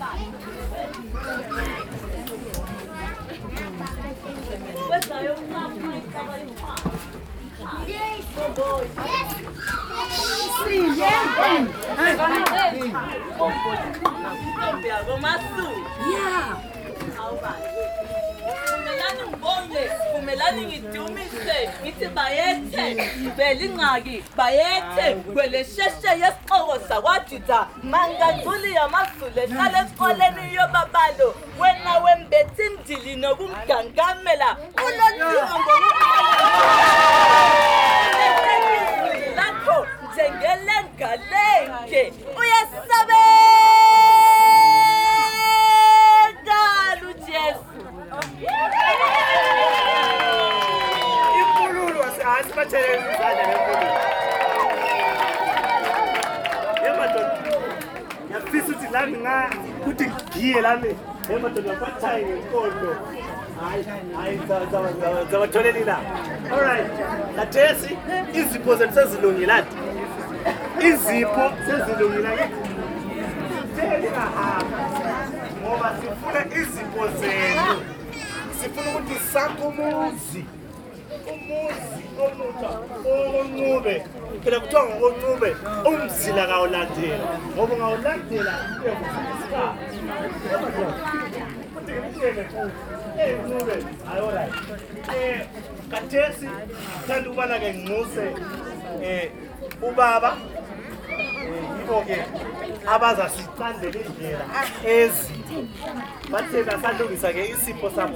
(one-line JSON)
{"title": "Pumula, Bulawayo, Zimbabwe - a praise poem for the new couple...", "date": "2013-12-21 15:35:00", "description": "… a praise poem performed by a woman poet, and a thanks-giving hymn by the whole community while a long line of guests is getting in place to offer their congratulations and gifts to the new couple; all this, framed by the announcements of the event’s master of ceremony…", "latitude": "-20.15", "longitude": "28.48", "altitude": "1352", "timezone": "Africa/Harare"}